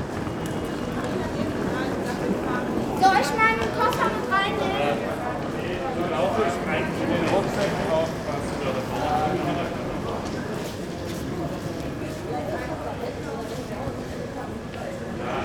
hamburg dammtor - bahnhof, eingangshalle / station, entry hall
station hamburg dammtor, entry hall, early evening, busy people
Hamburg, Germany, 21 August 2009